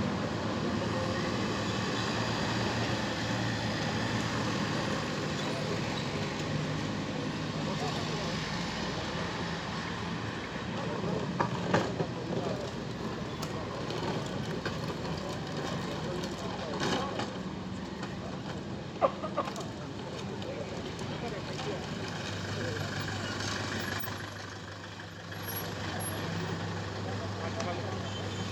Cra., El Rosal, Cundinamarca, Colombia - Via Principal Centro El Rosal
IIn this environment you can hear a main road of the western savannah of Bogotá, in the municipality of El Rosal, we heard people talking in this location, heavy cars passing by, vans, cars and motorcycles, a child riding a bicycle, a lady dragging a shopping cart, a man coughing, cars braking and accelerating, whistles from cars and motorcycles, a lady receiving a call, car alarms, a child riding on a board or skateboard, a machine turned on in a butcher shop breaking bones, gas truck bells.n this environment you can hear a main road of the western savannah of Bogotá, in the municipality of El Rosal, we heard people talking in this location, heavy cars passing by, vans, cars and motorcycles, a child riding a bicycle, a lady dragging a shopping cart, a man coughing, cars braking and accelerating, whistles from cars and motorcycles, a lady receiving a call, car alarms, a child riding on a board or skateboard, a machine turned on in a butcher shop breaking bones, gas truck bells.
Región Andina, Colombia